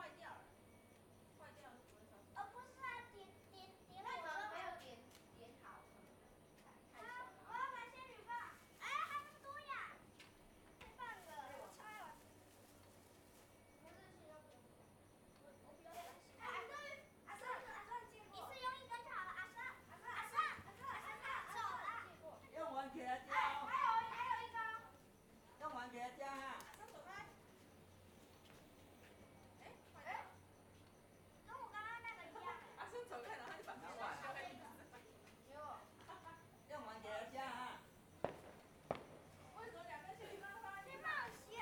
蕃薯村, Shueilin Township - firecrackers
Kids playing firecrackers, Traditional New Year, Zoom H6 M/S
Shuilin Township, 雲151鄉道, 31 January 2014